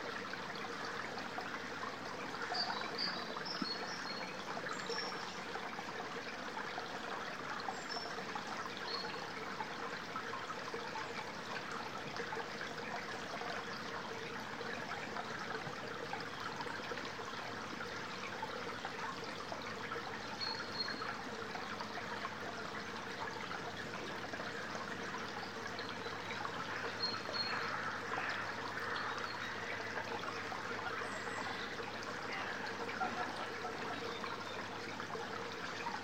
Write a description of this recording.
Recorded on a little bridge over a tiny stream in a small copse on the way down to Warbarrow beach. Sony M10